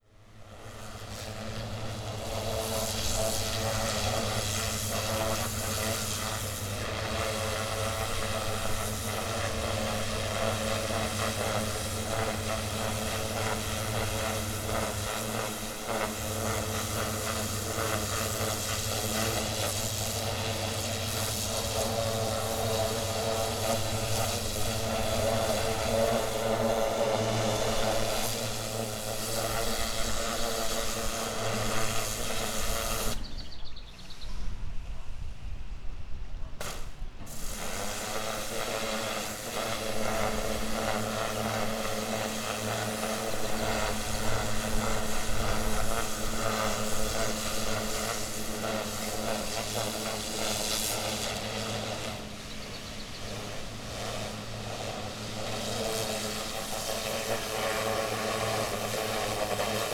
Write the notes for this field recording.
man washing a roof of a detached house with a power washer. bit wind distortion around 1:45. (sony d50)